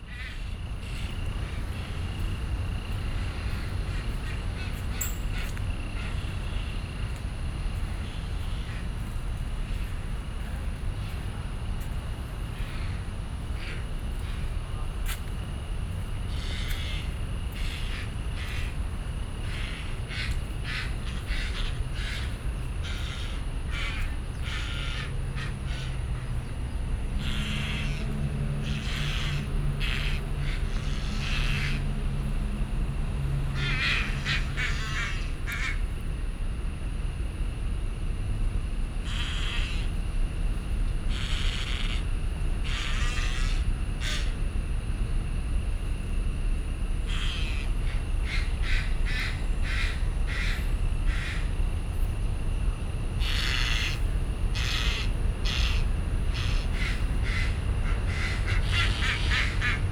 National Chiang Kai-shek Memorial Hall, Taipei - In the Park
in the Park, Sony PCM D50 + Soundman OKM II
台北市 (Taipei City), 中華民國, 4 June